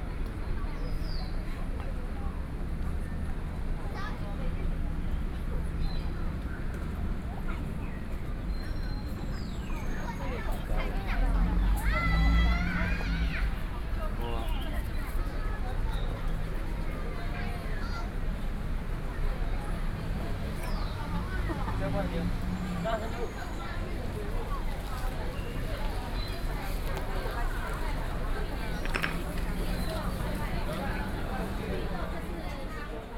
四四南村, 信義區, Taipei City - in the Park
4 November 2012, 15:06